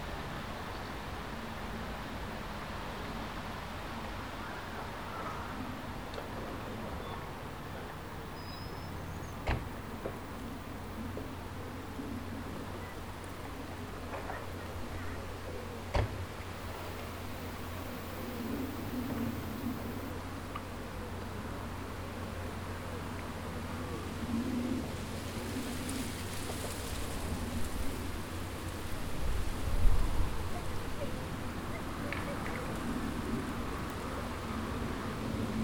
Ferry Ln, United Kingdom - Riverside Meditation, South Stoke
Riverside meditation on the banks of the Thames at South Stoke on a sunny Tuesday afternoon. The wind rustling the bushes overhanging the river is layered with the sounds of pleasure boats and trains passing by, aircraft from nearby RAF Benson and Chiltern Aerodrome, and people relaxing in the gardens of the properties on the opposite side of the river. Recorded on a Tascam DR-40 using the on-board microphones (coincident pair) and windshield.
15 August